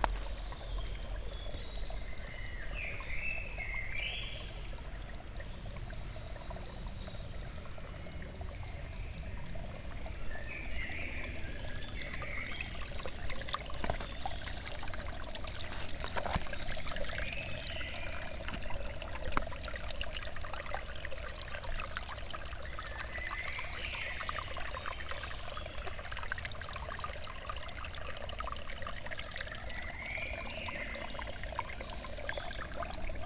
fourth pond, piramida, maribor - now everything is green here
Maribor, Slovenia